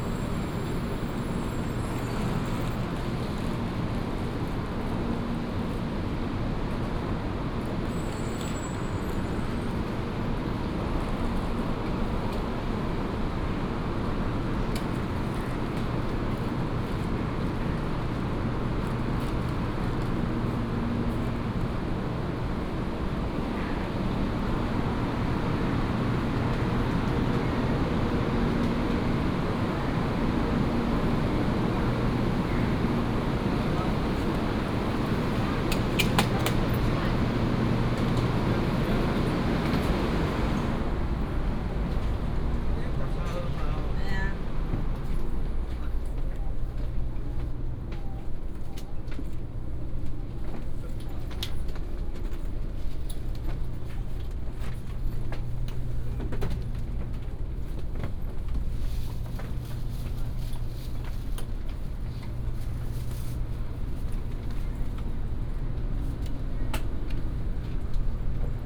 Taipei Main Station, Taiwan - in the station platform
in the station platform, The train travels
Taipei City, Taiwan, March 2017